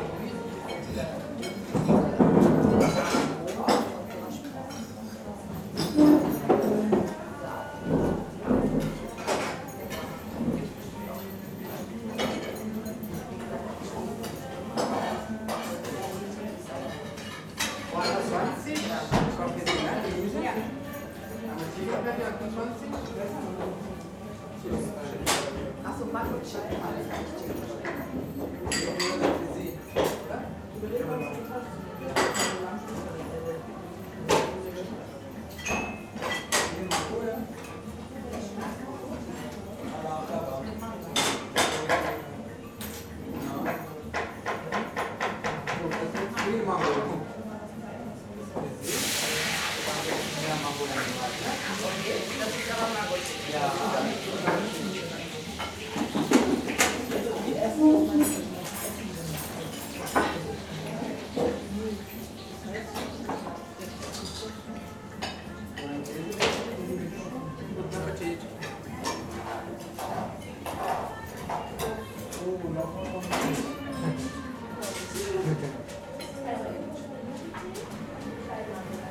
berlin, pannierstr. - india restaurant
small india restaurant at pannierstr., ambience. (for Lola G., because of the background music...)